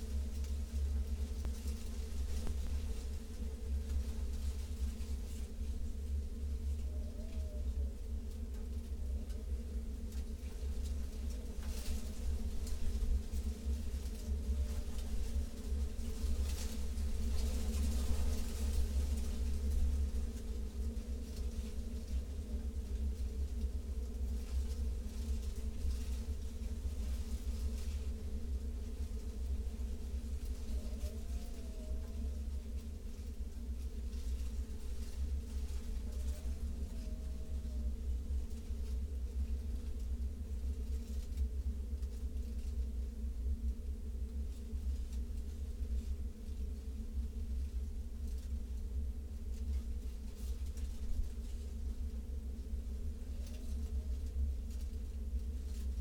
Vyžuonų sen., Lithuania, in the well
in old reclamation well